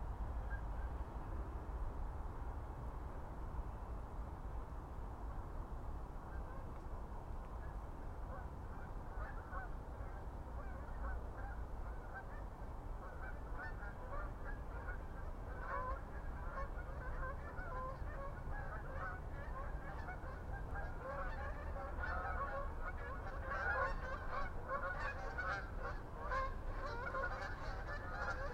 Recording on path between Saltwell Cemetery and Saltwell Allotments. Facing West and Team Valley Trading Estate. A1 in distance and East Coast Mainline near-by. Two formations of Geese, possibly Canadian Geese fly over. Recording includes sound of train travelling South on East Coast Mainline. Also sound of dog whining. Recorded on Sony PCM-M10.